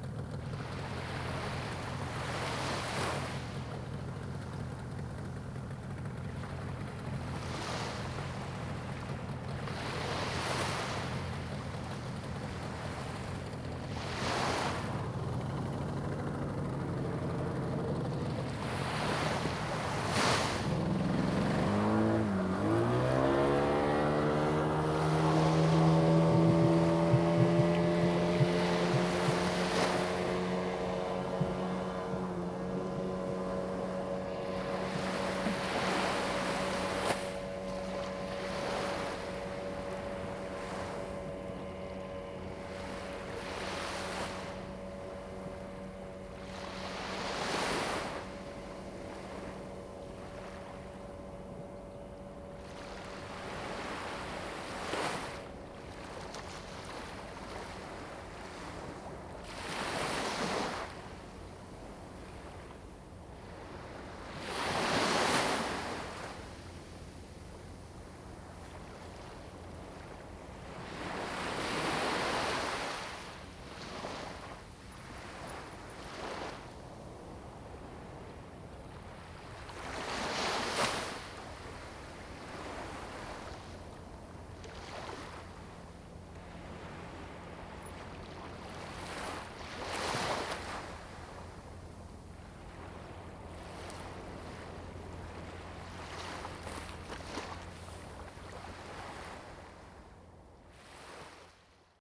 隘門沙灘, Huxi Township - In the beach
In the beach, Sound of the waves
Zoom H2n MS +XY